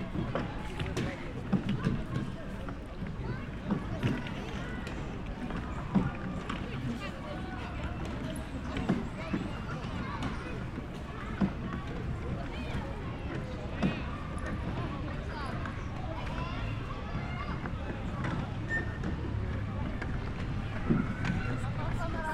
Largo do Santuário do Bom Jesus, Braga, Portugal - Lake with boats - Lake with boats
Lake with people sailling small wooden boats, Bom Jesus de Braga Sactuary. Recorded with SD mixpre6 and AT BP4025 XY stereo microphone.
8 September